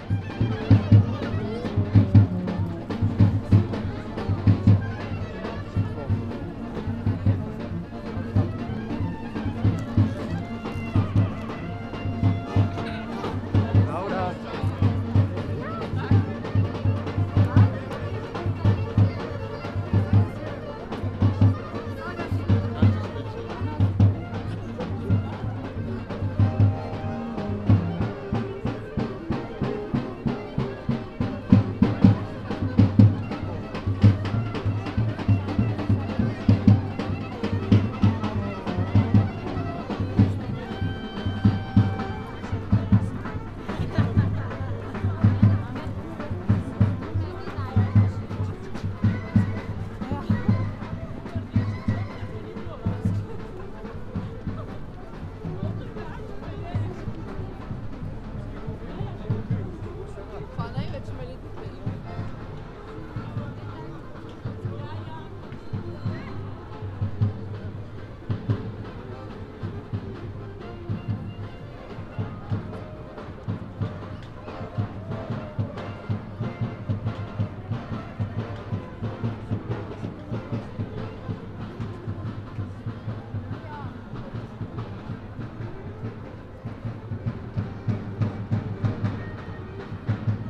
tromostovje, ljubljana - street sounds
a walk from ”triple bridges” to čopova street, street musicians, river ljubljanica almost audible, people passing and talking
Slovenia, 9 December 2013